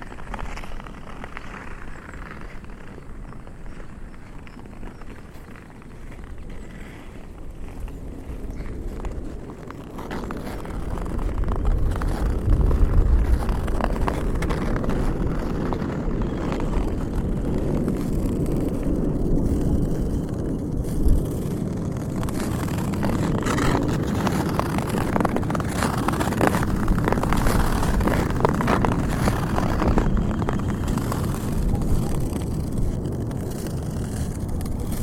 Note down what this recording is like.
winter days with woodpecker and poeple scating on the Vltava river, prague favourite sounds